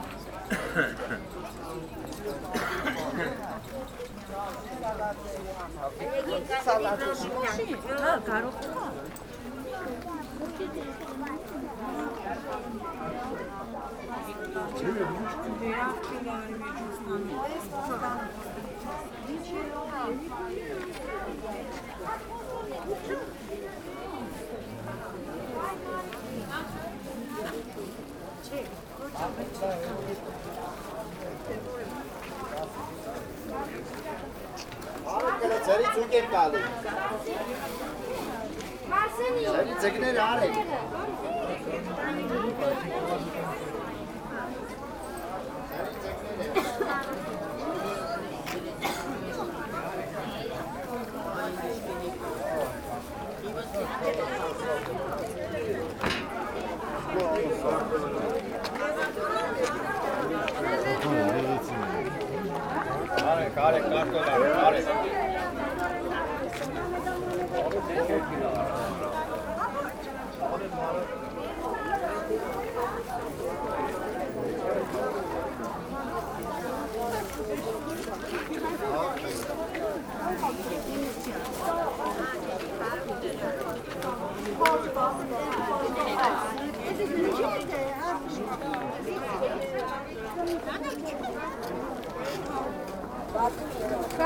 Gyumri, Arménie - Gyumri market
The daily Gyumri market, where you can find absolutely everything you want. A long walk between the between vendors' stalls.